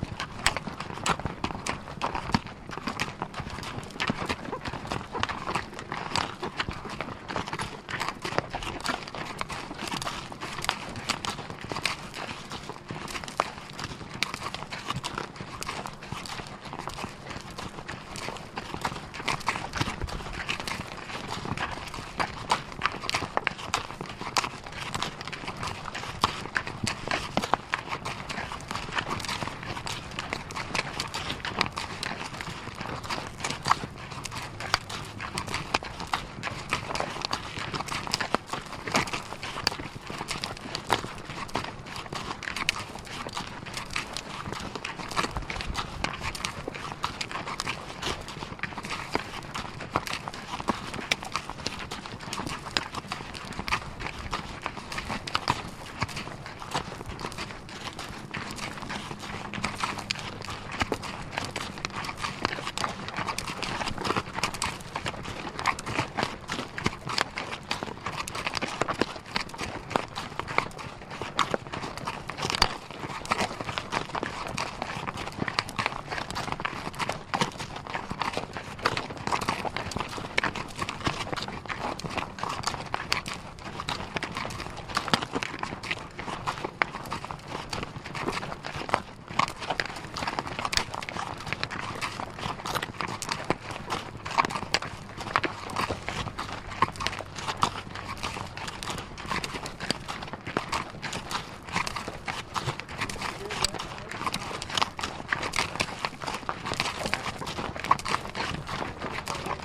Olancha, CA, USA - Mule Hoofs from 100 Mules Walk the Los Angeles Aqueduct
Metabolic Studio Sonic Division Archives:
Recording of mule hoofs taken during "100 Mules Walk the Los Angeles Aqueduct. Recorded with two Shure VP64 microphones attaches to either side of saddle on one mule
California, United States